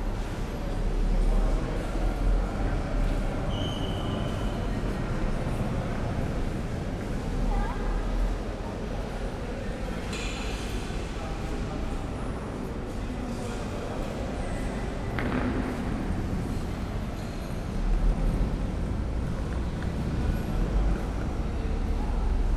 Oldenburg Hbf - main station, hall ambience
Oldenburg Hbf, main station, hall ambience
(Sony PCM D50, DPA4060)